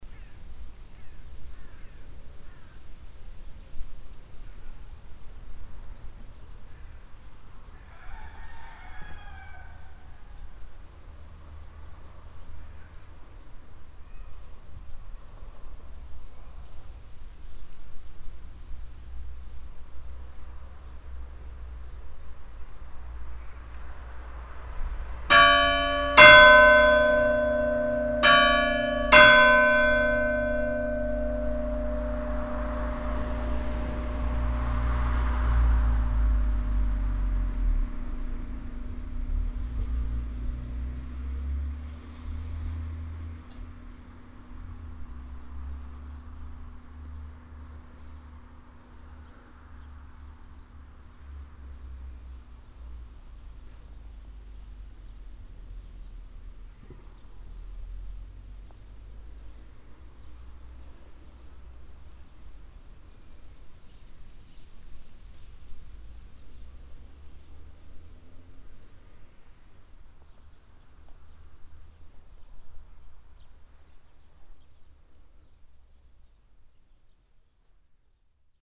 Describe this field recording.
A rooster call, the bells and some sunday noon ambience at the Saint-Corneille church. Drauffelt, St. Cornelius-Kirche, Glocken, Ein Hahnenruf, die Glocken und etwas Sonntagmittag-Atmosphäre bei der St. Cornelius-Kirche. Drauffelt, église, cloches, Un coq chante, le son des cloches et l’ambiance d’un dimanche midi à l’église Saint-Corneille. Project - Klangraum Our - topographic field recordings, sound objects and social ambiences